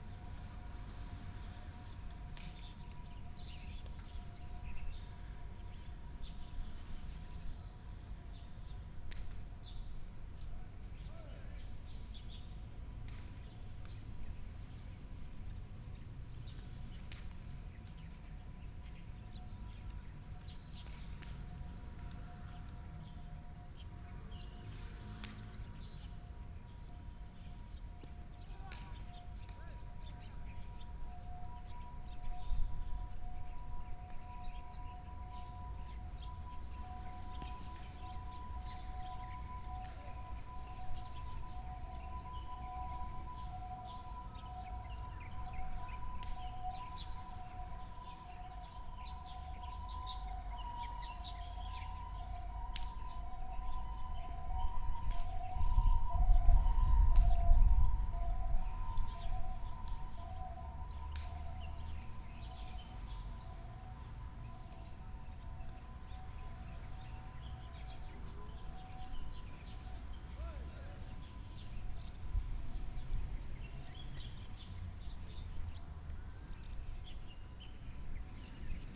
空軍廿二村, Hsinchu City - sound of the ambulance
Under the tree, Birds sound, The sound of the ambulance, Playing baseball, Here was the home area of soldiers from China, Binaural recordings, Sony PCM D100+ Soundman OKM II